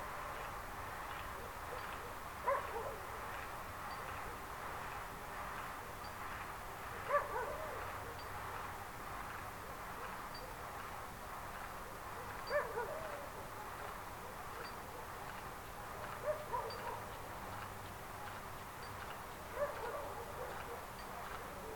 Unnamed Road, Antigonos, Ελλάδα - Corn Fields
Record by: Alexandros Hadjitimotheou
Περιφέρεια Δυτικής Μακεδονίας, Αποκεντρωμένη Διοίκηση Ηπείρου - Δυτικής Μακεδονίας, Ελλάς, 8 August, 02:33